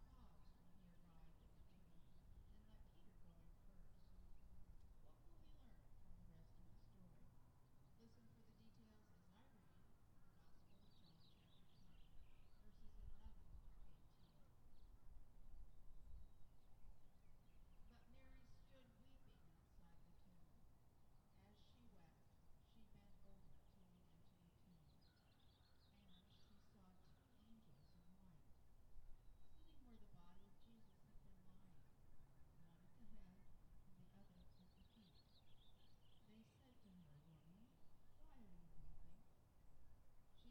M St, Washougal, WA, USA - Drive up Easter Service
The Methodist Church sits at the bottom of the hill as you drive into our neighborhood. This morning, they held Easter Service in the parking lot. It was calm, with only a slight breeze. Sounds from the service- music, worship, and the prayers for those suffering during the pandemic- drifted up the street towards my house and mixed with the sounds of birds, kids walking dogs, passing cars, distant freight train moving through our little town, and even the Easter bunny passing by on his harley. Warm sun and blue skies a welcome change after the long, grey winter in the PNW. I used a TASCAM DR-40, which was a gift from my mentor at the low power, volunteer radio station KXRW Vancouver. I mounted it to a PVC pipe, and placed it on the tripod of an old music stand.
Washington, United States of America